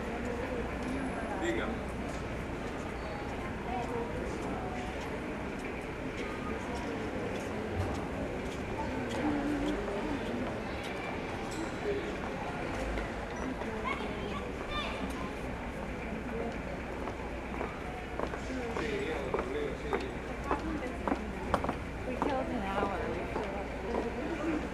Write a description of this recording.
arcelona, Passeig del Born at 20.10.2009